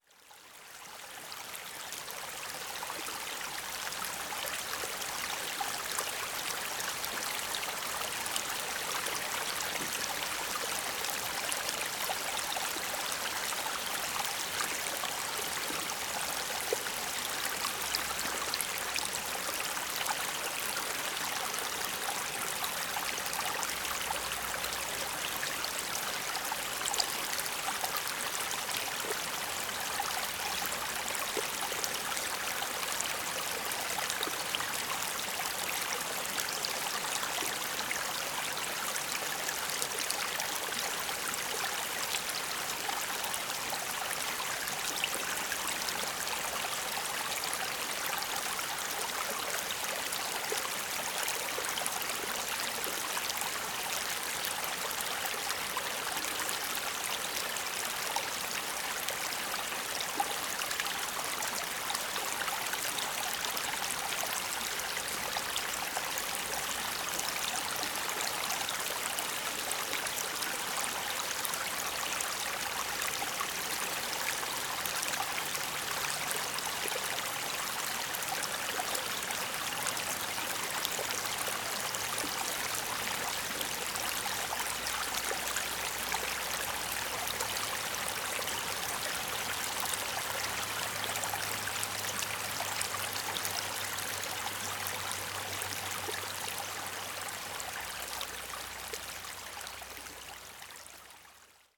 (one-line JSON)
{"title": "Austin, TX, USA - Mansion Runoff Springs", "date": "2015-08-02 19:36:00", "description": "Recorded in a spring cove on the Lower Colorado River (Town Lake) with a Marantz PMD661 and a DPA4060 [mono]", "latitude": "30.28", "longitude": "-97.78", "altitude": "171", "timezone": "America/Chicago"}